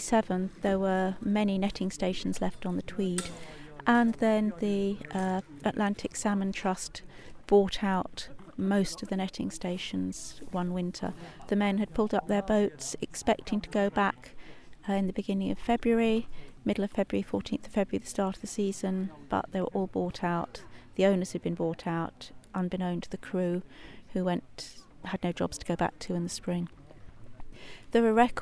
Paxton, Scottish Borders, UK - River Voices - Martha Andrews, Paxton House
Field recording with Paxton House curator Martha Andrews on the shingle shore at Paxton netting station on the River Tweed in the Scottish Borders.
Martha talks about the history of netting and the decline in fishing on the River Tweed, as the fishing boat rows a shot in the background.